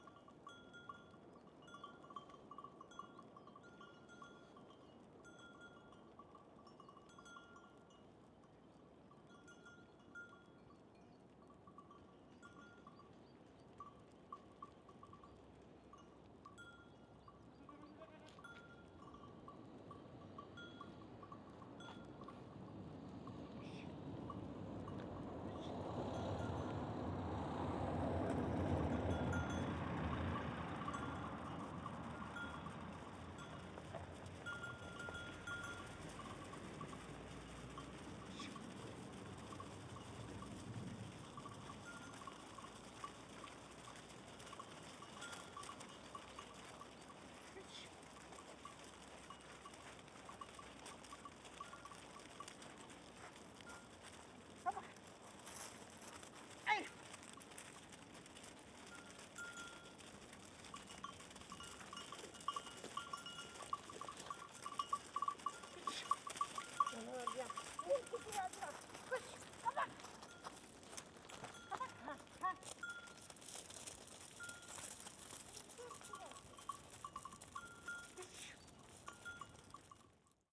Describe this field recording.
On the road to Iztuzu Beach there are a plenty of places to stop to enjoy the amazing view. Here a herd of goats is herded along the path. (Recorded w/ AT BP4025 on SD633)